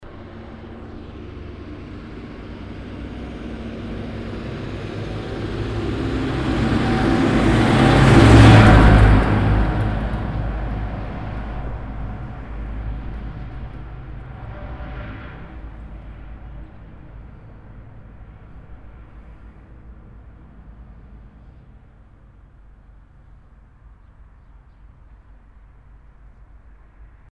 At the main through street in the morning time. The sound of a big tractor passing by.
Tandel, Veianerstrooss, Traktor
Auf der Hauptstraße am Morgen. Das Geräusch von einem großen Traktor, der vorbeifährt.
Tandel, Veianerstrooss, tracteur
Le matin, dans la grande rue traversante. Le bruit d’un gros tracteur qui passe.